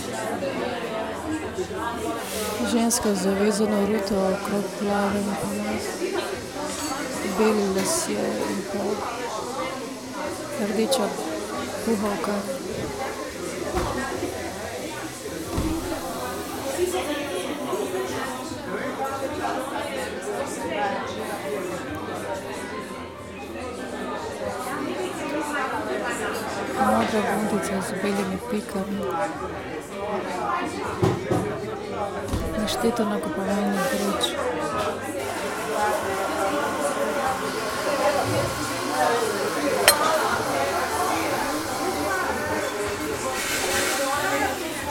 sitting by the window, looking outside, inner and outer through words, voices, short radio with my phone and radio aporee
Ljubljana, Slovenia, 23 December 2014